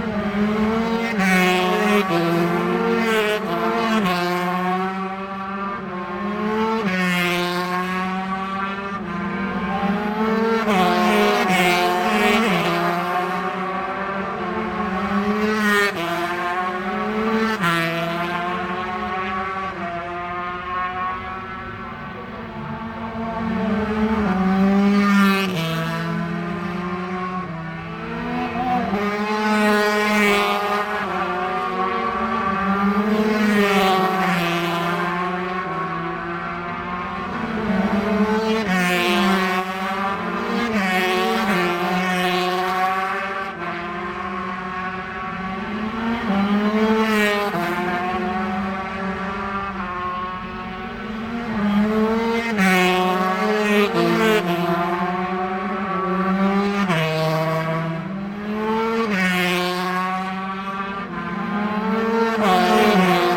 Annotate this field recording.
british superbikes 2002 ... 125 qualifying ... mallory park ... one point stereo mic to minidisk ... date correct ... time not ...